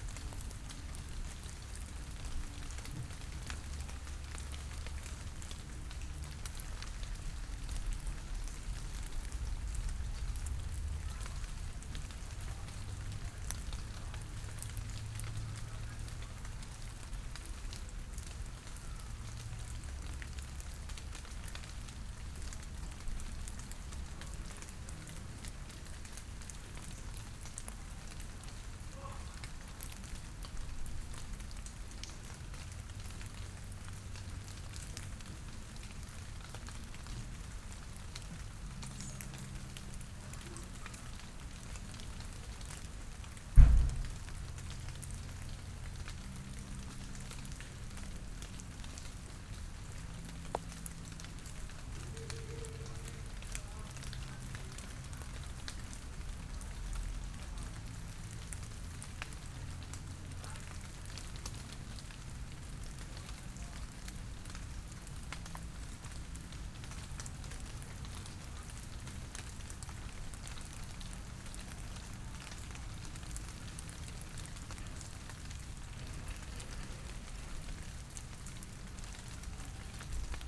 Schulstr., Beselich Niedertiefenbach - playground, rain drops
playround near old school, under the big oak tree ("Hitler-Eiche"), rain drops falling from the leaves, an aircraft, distant voices
(Sony PCM D50, DPA4060)
Beselich, Germany, 13 July 2014